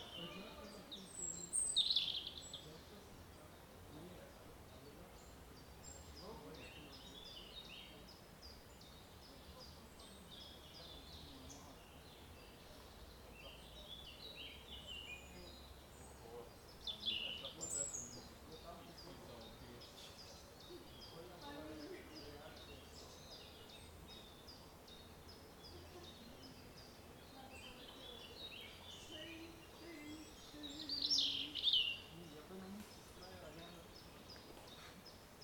вулиця Нагірна, Вінниця, Вінницька область, Україна - Alley12,7sound13natureconversations
Ukraine / Vinnytsia / project Alley 12,7 / sound #13 / nature - conversations